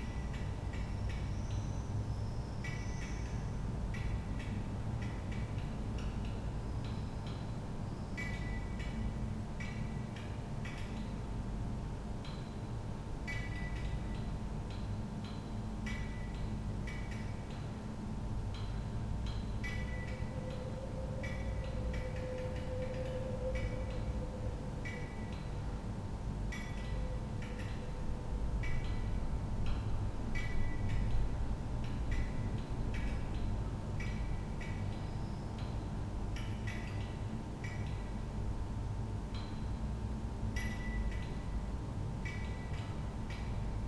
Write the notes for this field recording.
hotel G9 stairway hall, defect fluorescent tube